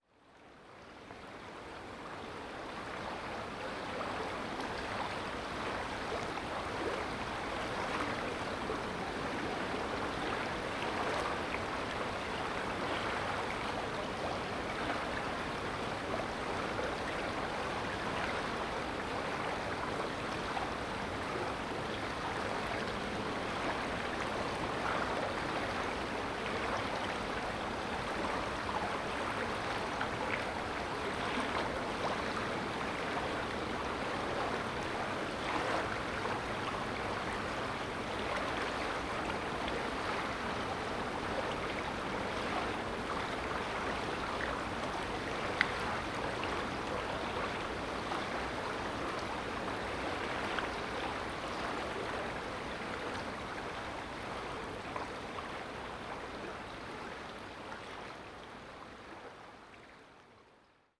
A binaural project.
Headphones recommended for best listening experience.
A personally "defined" 400 Meter space of the Ilm river revealing its diverse tones, forms and gestures. The night peripheral ambience is relatively calm so there is less masking of the space.
Recording technology: Soundman OKM, Zoom F4.